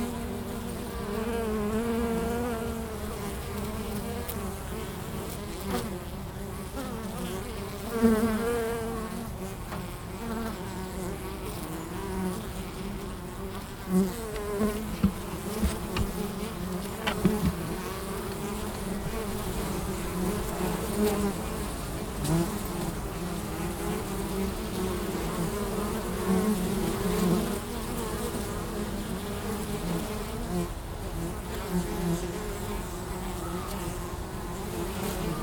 Na Křivce, Praha, Czechia - Včely v Michli
Včely na svažité zahradě na Plynárnou
Praha, Česko, 19 May 2022, 14:01